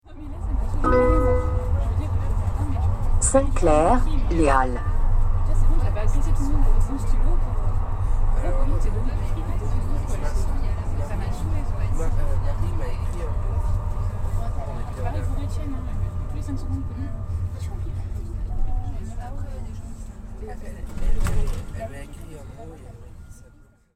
Agn s at work Les Halles RadioFreeRobots